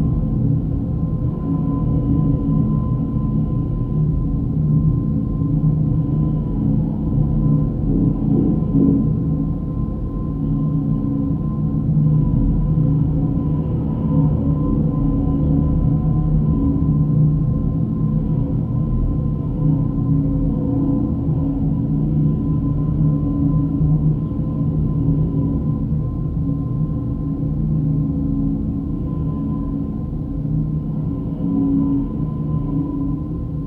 Antalieptė, Lithuania, churchs rain pipe
Another sound excursion with geophone. This time - the rain pipe of Antaliepte's church.